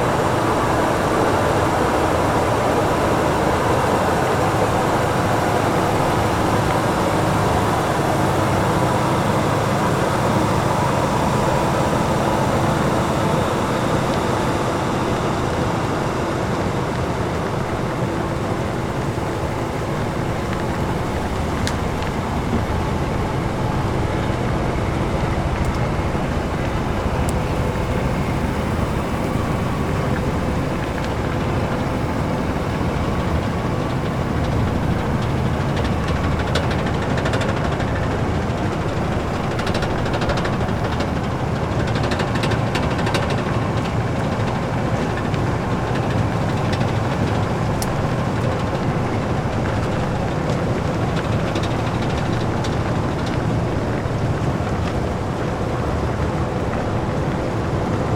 The sound of the impressive machinery that rotates and sweeps the wheel as it cuts it to coal seam.